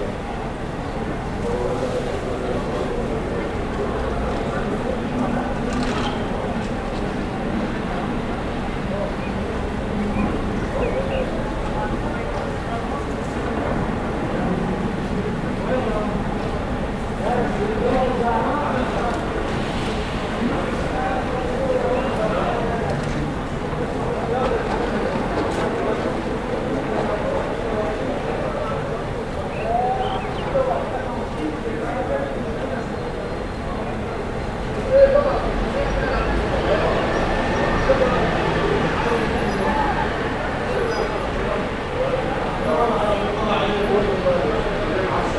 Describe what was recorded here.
You can hear people talking, birds, traffic on this sunny saturday afternoon. It was the first day that all shops were allowed to open again after the first long Lockdown for COVID-19 in Austria.